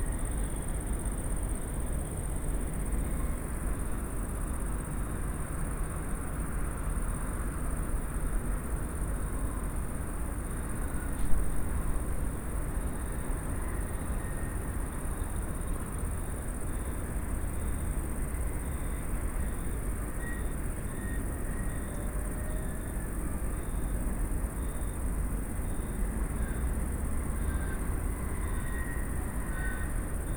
with crickets, car traffic and train